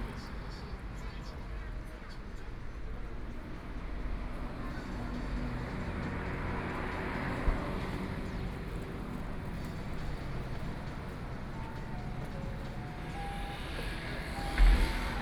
Su'ao Township, Yilan County - In front of the convenience store
In front of the convenience store, At the roadside, Traffic Sound, Hot weather